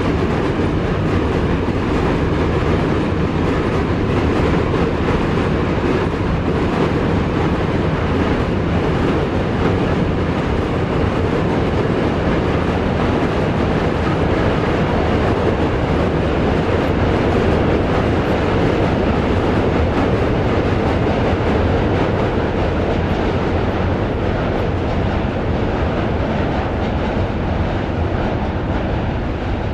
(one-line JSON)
{"title": "Manhattan Bridge, Brooklyn, NY, USA - Under the Williamsburg Bridge", "date": "2018-02-14 13:23:00", "description": "Under the Williamsburg Bridge. NYC\nzoom h6", "latitude": "40.70", "longitude": "-73.99", "altitude": "1", "timezone": "America/New_York"}